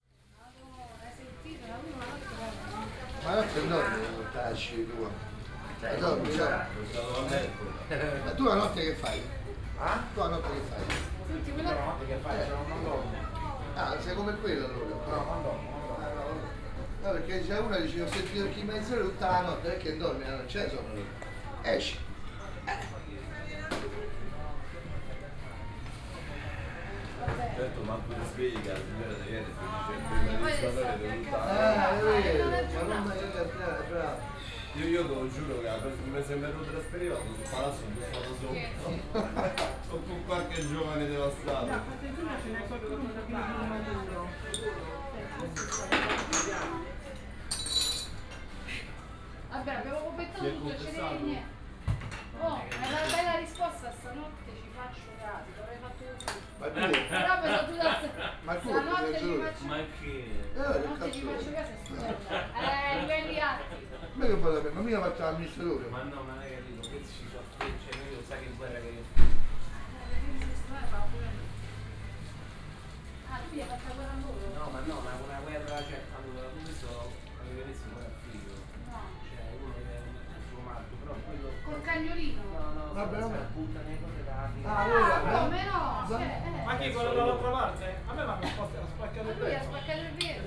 Rome, Viale di Trastevere - 'Caffe' Arabo' bar
(binaural recording)
vigorous conversations of customers and staff of a small cafe. sounds of making coffee, washing dishes, running TV, people dropping by, making quick order, having their shot of coffee, paying, thanking and leaving. all withing a split of a second.
Rome, Italy, 31 August 2014, 10:12